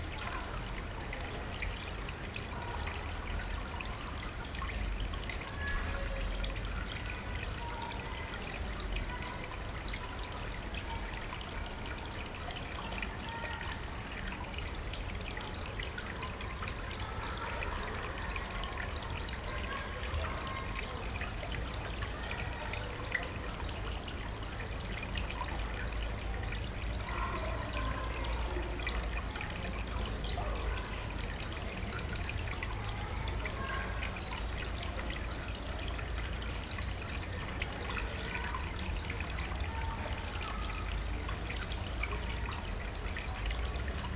where
you are not supposed
to go
but unter
the most beautiful play of
water and light
a bridge
between
pleasure and pleasure
even the dogs
won't notice you
Nordrhein-Westfalen, Deutschland